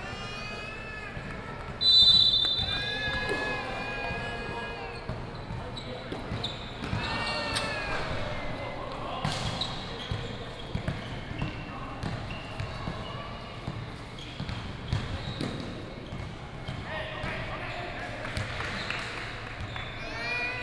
埔里綜合球場, Puli Township, Nantou County - Basketball game
Basketball game, the basketball court